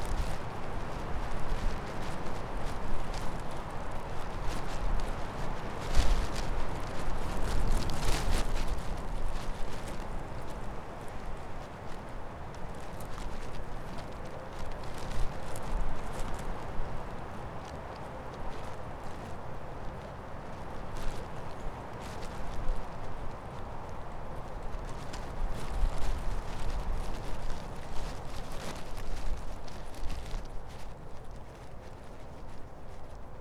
Lithuania, Utena, plastic in wind
some plastic package partly frozen in snow
Lietuva, European Union, 16 March 2013, 14:20